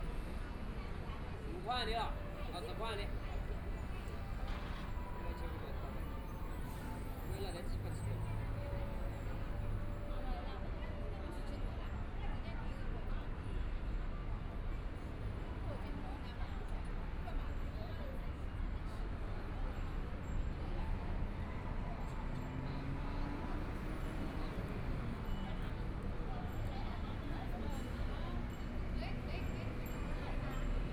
{"title": "Taipei, Taiwan - Tourists", "date": "2014-02-25 19:06:00", "description": "In the square outside the Taipei 101, Traffic Sound, Tourists\nBinaural recordings\nZoom H4n+ Soundman OKM II", "latitude": "25.03", "longitude": "121.56", "timezone": "Asia/Taipei"}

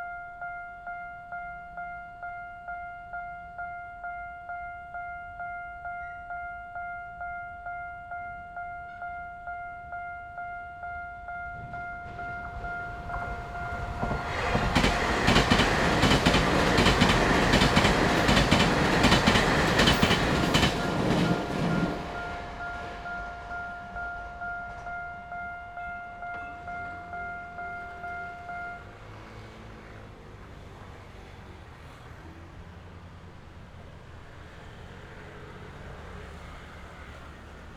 {"title": "Deyang Rd., Jiaoxi Township - Railway level crossing", "date": "2014-07-26 18:54:00", "description": "Near the railroad tracks, Trains traveling through, Traffic Sound, Railway level crossing\nZoom H6 MS+ Rode NT4", "latitude": "24.83", "longitude": "121.77", "altitude": "7", "timezone": "Asia/Taipei"}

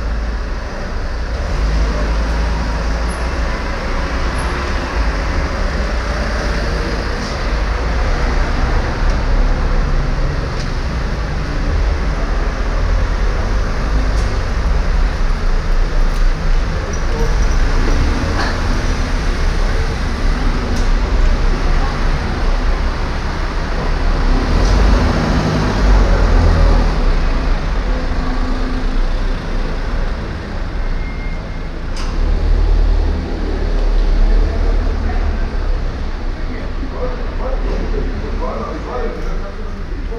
{"title": "Stadtkern, Essen, Deutschland - essen, akazienallee, car park", "date": "2014-03-29 16:25:00", "description": "In einem Parkhaus. Die Klänge ein- und ausfahrender Fahrzeuge, das Schlagen von Türen, Benutzung des Kartenautomatens in der hallenden, offenen, betonierten Architektur.\nInside a car park. The sound of cars driving in and out, the banging of car doors and the sound of the card automat reverbing in the open, concrete architecture.\nProjekt - Stadtklang//: Hörorte - topographic field recordings and social ambiences", "latitude": "51.45", "longitude": "7.01", "altitude": "83", "timezone": "Europe/Berlin"}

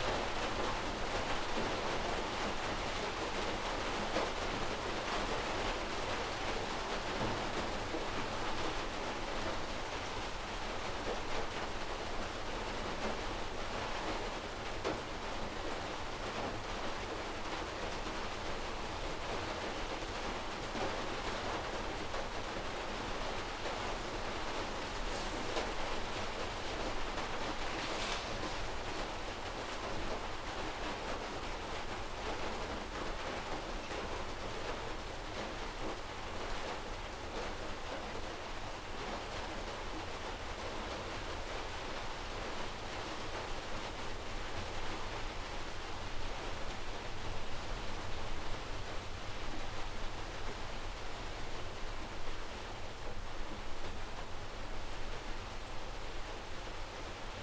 {"title": "Great Lingy Hut Bothy - Sheltering from the rain", "date": "2020-09-07 10:39:00", "description": "After two soggy days hiking, this shelter was so welcome.\nRecorded on LOM Mikro USI's and Sony PCM-A10.", "latitude": "54.69", "longitude": "-3.07", "altitude": "577", "timezone": "Europe/London"}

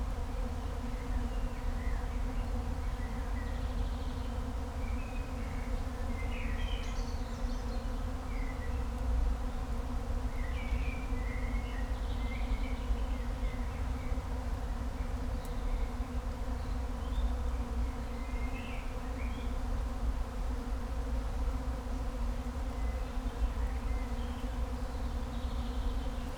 {"title": "Königsheide, Berlin, Deutschland - humming trees", "date": "2020-06-27 15:45:00", "description": "intense and amazing humming in the trees, probably caused by bees, must be thousands, couldn't see them though.\n(Sony PCM D50, Primo EM172)", "latitude": "52.45", "longitude": "13.49", "altitude": "37", "timezone": "Europe/Berlin"}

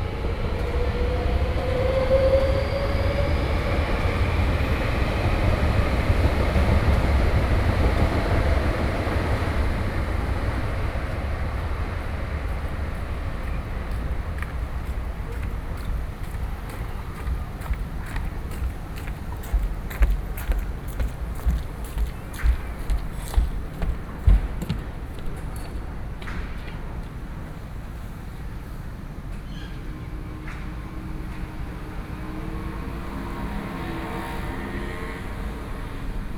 {"title": "Taipei, Taiwan - In the next to the MRT track", "date": "2012-12-07 19:44:00", "latitude": "25.14", "longitude": "121.49", "altitude": "9", "timezone": "Asia/Taipei"}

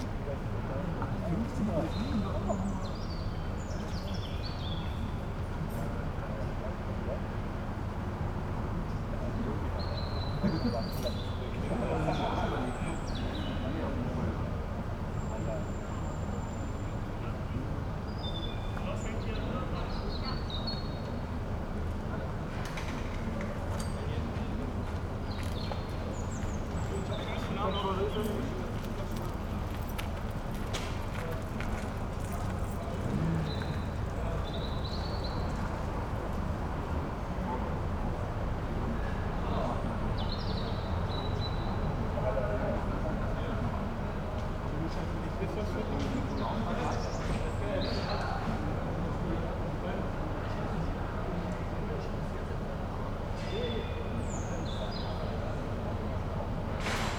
{"title": "cologne, brüsseler platz, at the benches - evening ambience", "date": "2013-05-23 22:10:00", "description": "cold spring evening, people cleaning up after an event.\n(Sony PCM D50)", "latitude": "50.94", "longitude": "6.93", "altitude": "59", "timezone": "Europe/Berlin"}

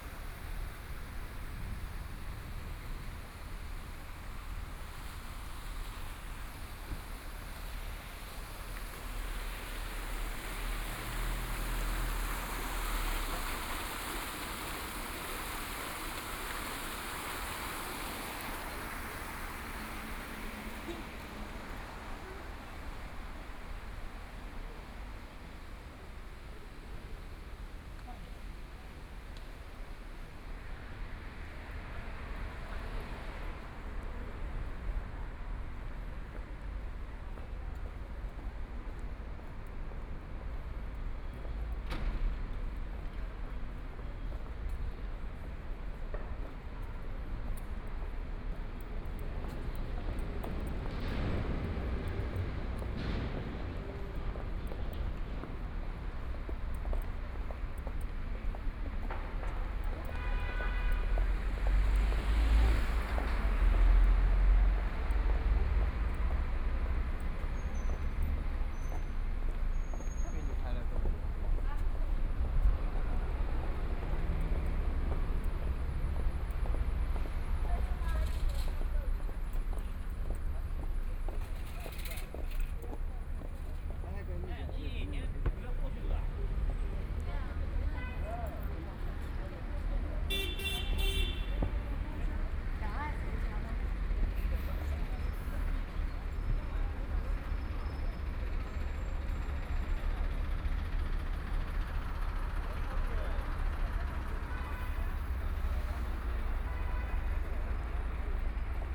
{"title": "Sichuan Road, Shanghai - Small streets at night", "date": "2013-12-02 20:36:00", "description": "Small streets at night, Traffic Sound, Old small streets, Narrow channel, Binaural recordings, Zoom H6+ Soundman OKM II", "latitude": "31.24", "longitude": "121.48", "altitude": "15", "timezone": "Asia/Shanghai"}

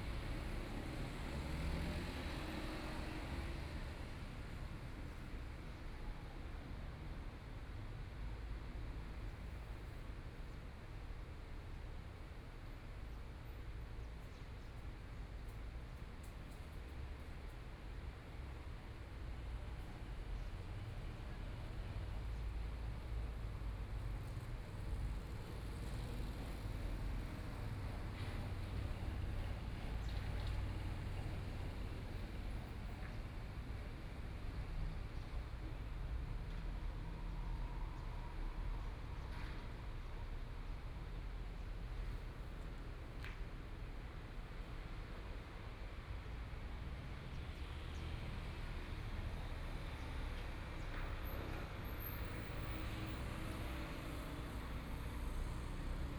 {"title": "國防里, Hualien City - Environmental sounds", "date": "2014-02-24 11:59:00", "description": "Aircraft flying through, Traffic Sound, Environmental sounds\nPlease turn up the volume\nBinaural recordings, Zoom H4n+ Soundman OKM II", "latitude": "23.99", "longitude": "121.61", "timezone": "Asia/Taipei"}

